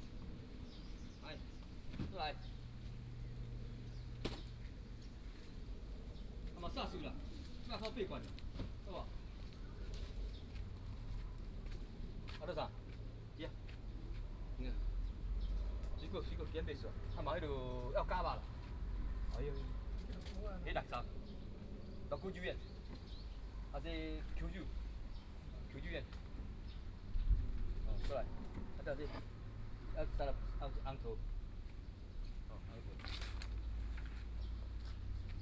鎮安宮, 壯圍鄉新南村 - In the temple plaza
In the temple plaza, Traffic Sound, Driving a small truck selling produce and live everyday objects
Sony PCM D50+ Soundman OKM II
Zhuangwei Township, Yilan County, Taiwan, 26 July 2014